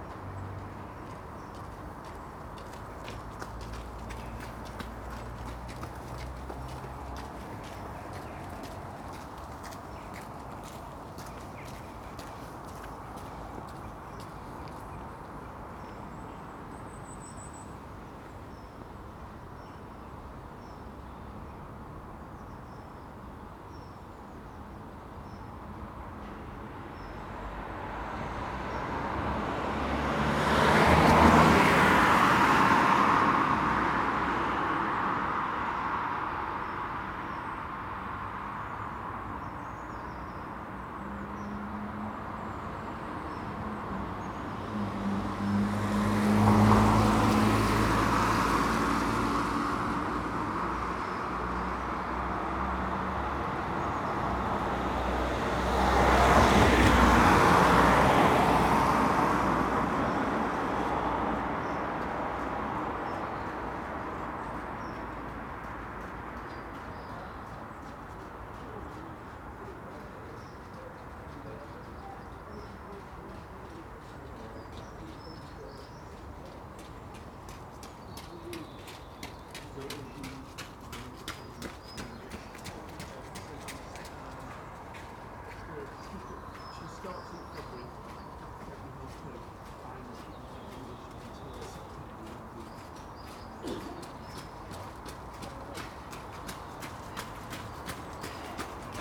Contención Island Day 27 outer west - Walking to the sounds of Contención Island Day 27 Sunday January 31st
The Drive Westfield Drive Oakfield Road Kenton Road
The lightest of snow falls
dusts the ground
Six runners
six walkers
Mock-Tudor wood
on the ugly houses
closed curtains
England, United Kingdom, 31 January 2021